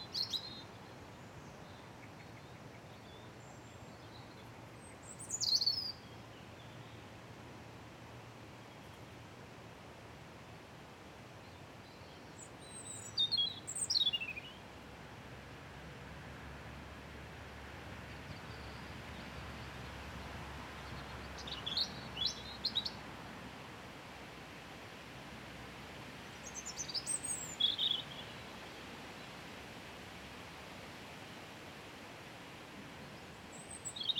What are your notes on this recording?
A windy evening with the sky turning pink and a bright moon. I sat down on the bench and noticed the Robin singing behind me, so I balanced my recorder on my bicycle seat facing away from the pond. Recorded with a Roland R-07.